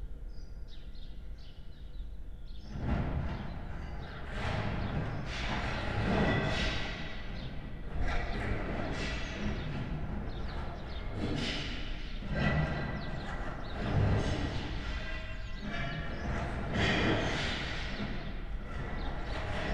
Berlin Bürknerstr., backyard window - backyard ambience /w deconstruction
backyard ambience, sound of debris falling down, construction works
(raspberry pi zero, IQAudio zero, Primo EM172 AB)